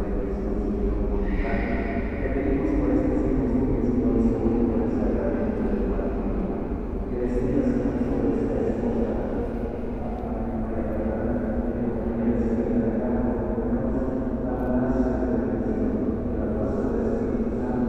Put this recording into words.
Walking outside the expiatory temple, entering the temple, and leaving again. You can hear people passing by outside, people selling things, cars passing through the street, and the sound of tires on the characteristic floor of Madero Street. Then the sound of entering the temple where a wedding was taking place and then the music begins. Some sounds of people and babies crying. And at the end going out again and where there are people talking, cars passing by with loud music and then arriving at the corner where there are stands selling tacos and food for dinner. I made this recording on October 23rd, 2021, at 8:49 p.m. I used a Tascam DR-05X with its built-in microphones and a Tascam WS-11 windshield. Original Recording: Type: Stereo, Caminando en el exterior del templo expiatorio, entrando al templo y saliendo de nuevo.